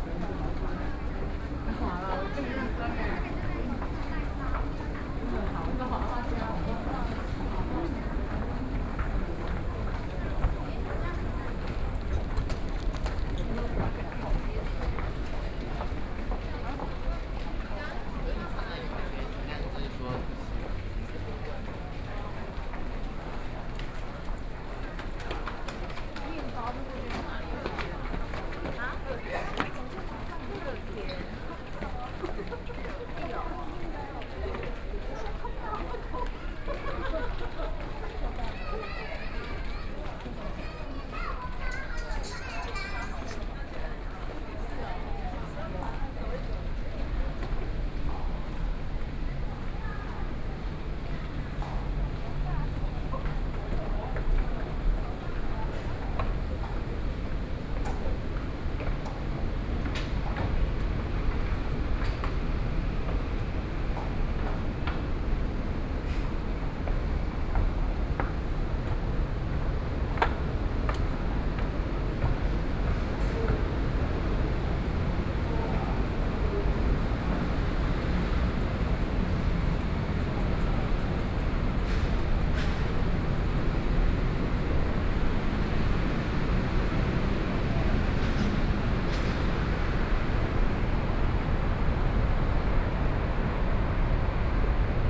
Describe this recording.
Convert other routes at the station, Sony PCM D50 + Soundman OKM II